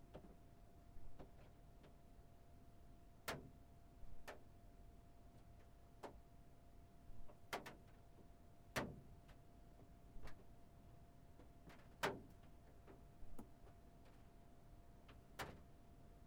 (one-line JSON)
{"title": "Mangerton Road, Muckross - hailstones and waterdroplets on a van roof", "date": "2018-01-25 13:00:00", "description": "Recorded with a Zoom H4 inside a van. Begins with a shower of hailstone hitting the roof followed by the falling of the accumulated droplets from the tree above the vehicle. Slight hum from recorder due to age.", "latitude": "52.02", "longitude": "-9.48", "altitude": "121", "timezone": "Europe/Dublin"}